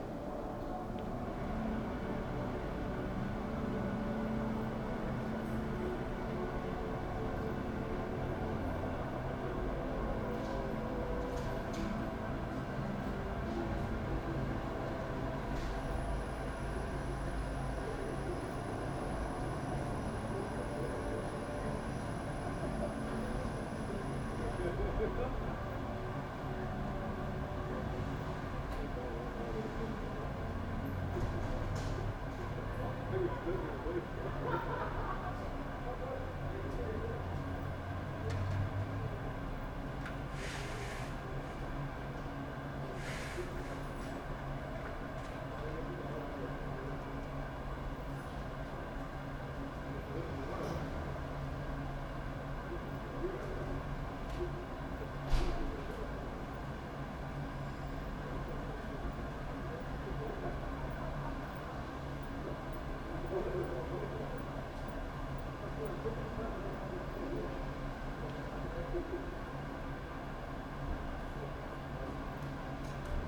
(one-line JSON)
{"title": "from/behind window, Mladinska, Maribor, Slovenia - late august wednesday evening", "date": "2013-08-28 22:35:00", "description": "with an atmosphere of distant football game", "latitude": "46.56", "longitude": "15.65", "altitude": "285", "timezone": "Europe/Ljubljana"}